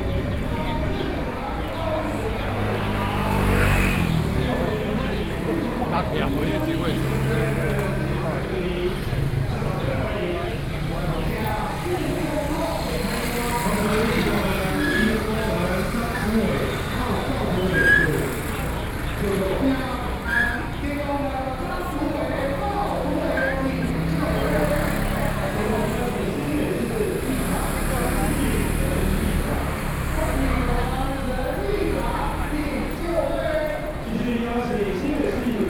Yǒngfú St, Sanzhong District, New Taipei City - Traditional temple festivals
Sanzhong District, New Taipei City, Taiwan, 4 November 2012